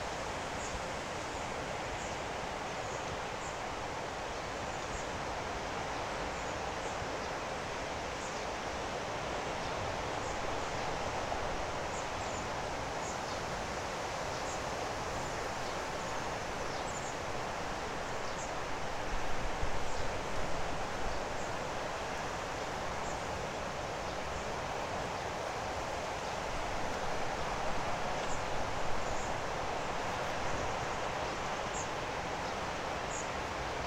Jūrmala, Latvia, at Janis Rainis pine trees
Favourite resting place of Latvian poet Janis Rainis to which he devoted a poem "Broken pine trees"